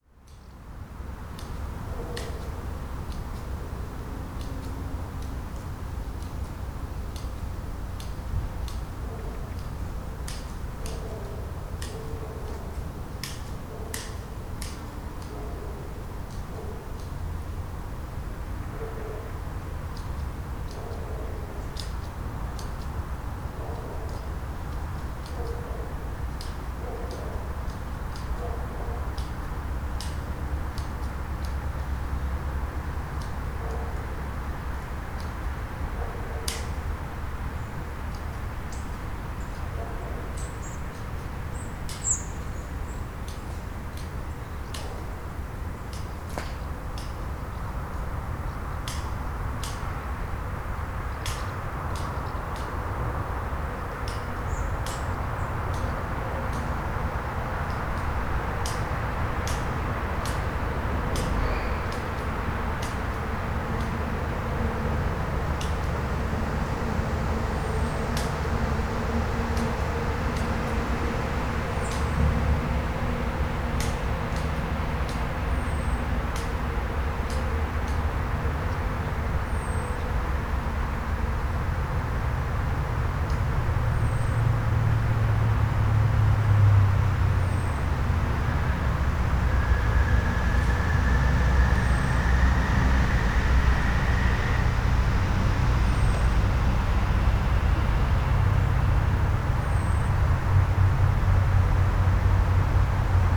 burg/wupper, burger höhe: evangelischer friedhof - the city, the country & me: protestant cemetery
birds, traffic noise of L 407, man cutting bushes
the city, the country & me: july 23, 2012